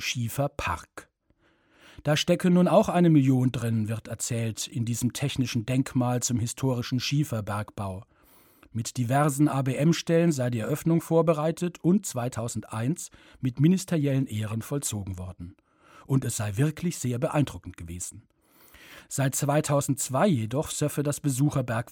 lehesten - staatsbruch
Produktion: Deutschlandradio Kultur/Norddeutscher Rundfunk 2009
Germany